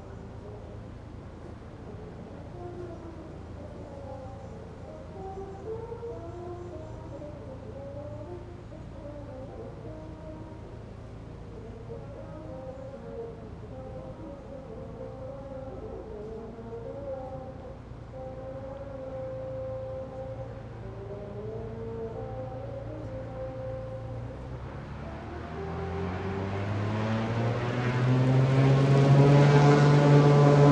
Berlin Stralau, Spree river bank ambience, various traffic: waterplane starting, joggers, bikers, boats